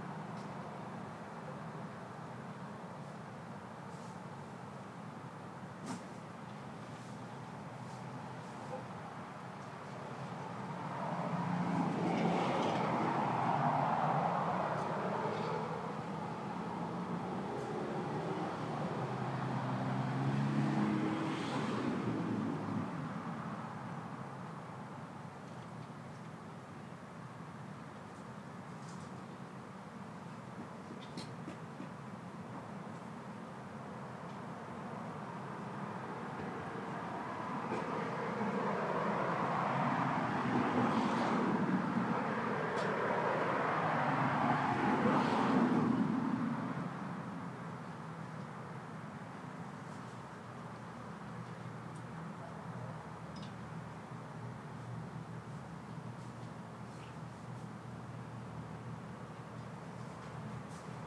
Denson Dr, Austin, TX, USA - Automobiles, Fence Construction
Recorded on my Zoom H4N.
Foreground is car traffic on a lazy Saturday, along with a fence being constructed nearby. Some emergency vehicles in the distance.